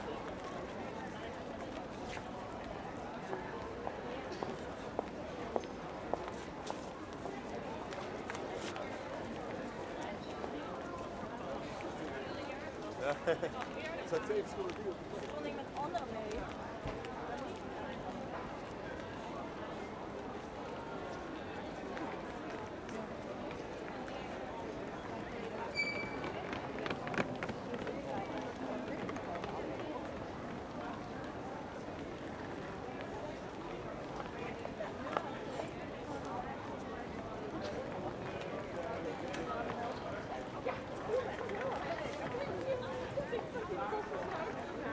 Hoog-Catharijne CS en Leidseveer, Utrecht, Niederlande - steps and cases 2
listen to the waves of people arriving and leaving - this recording follows as third to steps, steps and cases